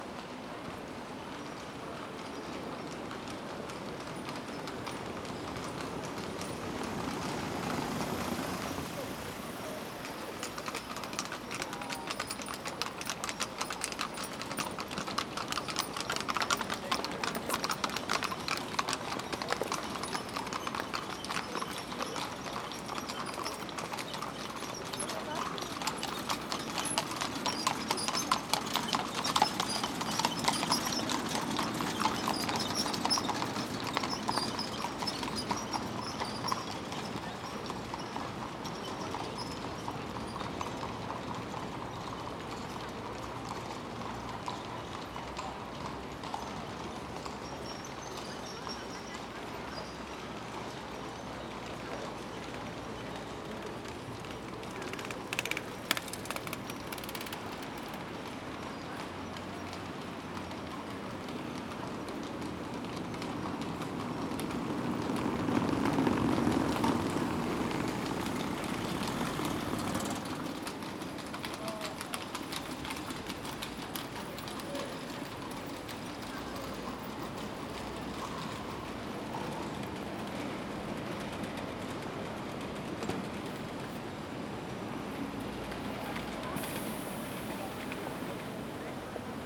Vienna, Hofburg
The horse-drawn carriages Fiaker crossing the place. You hear the noise of cars, bikes and Fiaker on cobbled pavement.
Vienna, Austria, October 2011